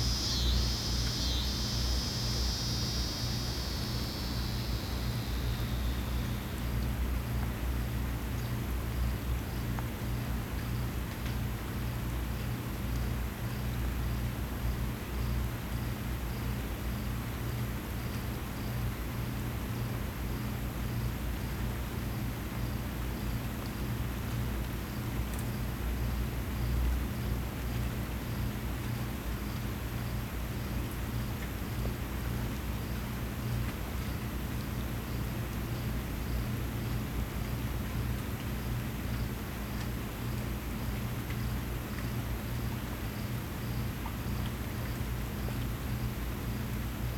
Rain, Cicadas and the Cricket Machine, Houston, Texas - Rain, Cicadas and the Cricket Machine
**Binaural recording**. Recorded at my sister's apt on a small lake as a memento before she moves out of the country. Cicadas, rain, ducks, and the ever-present air compressor that feeds an aerator in the "lake", emanating a round the clock drone and synthetic cricket chirp for all of the residents' year-round enjoyment.
CA-14 omnis (binaural) > DR100 MK2